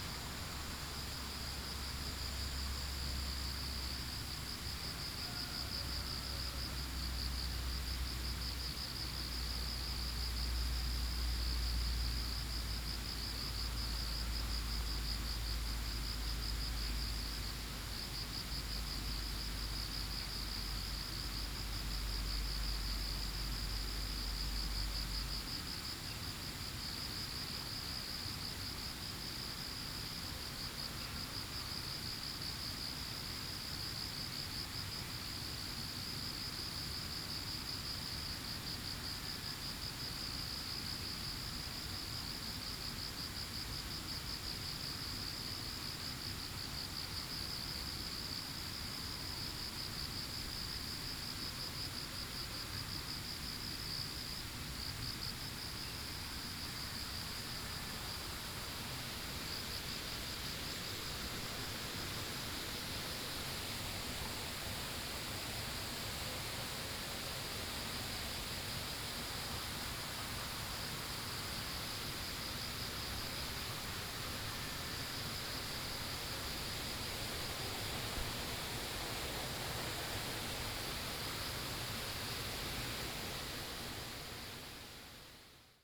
茅埔坑溪生態公園, 桃米巷, Nantou County - Early morning
Early morning, Bird calls, Insects called, The sound of water streams
12 August, ~05:00